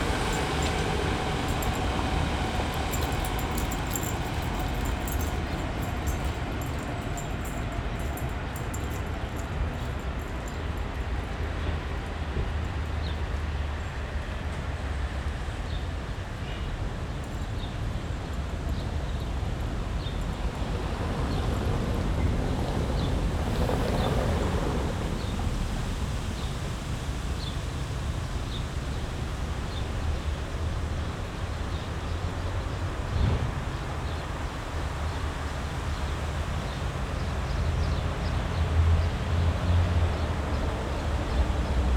Matthesstr., Treptow, Berlin - wind in birches, traffic hum, ambience
corner Beermannstr. / Matthesstr., training venue for dogs, seemingly closed. wind in birch trees, traffic hum. this area will loose ground in favour of the planned A100 motorway.
Sonic exploration of areas affected by the planned federal motorway A100, Berlin.
(SD702, Audio Technica BP4025)
Berlin, Deutschland, European Union, 17 May 2013, 14:55